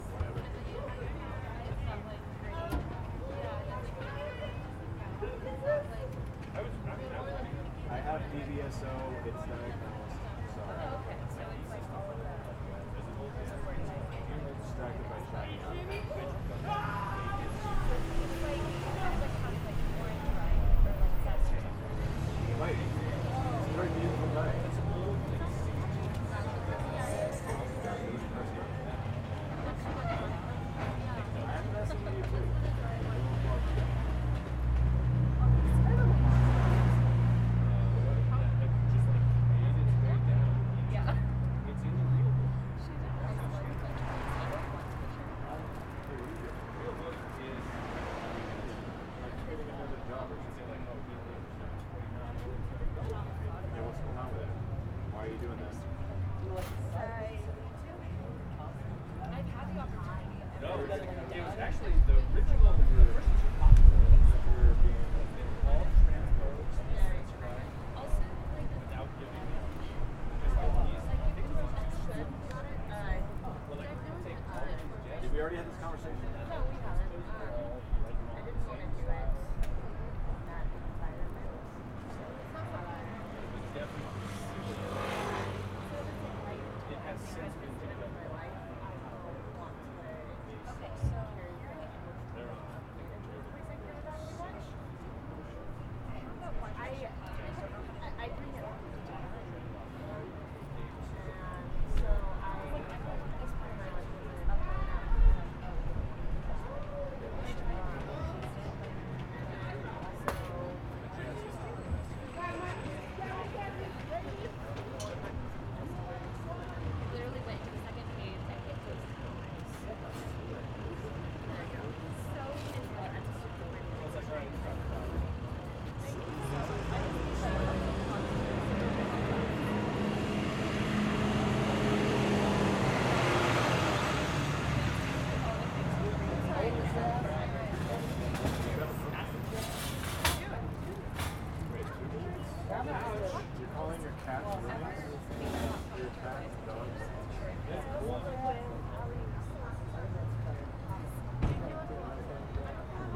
Wild Goose Meeting House, N Tejon St, Colorado Springs, CO, USA - Wild Goose Meeting House
Cars and conversation outside of the Wild Goose. Eating and drinking noises can also be heard. Recorded with ZOOM H4N Pro with a dead cat.
14 May 2018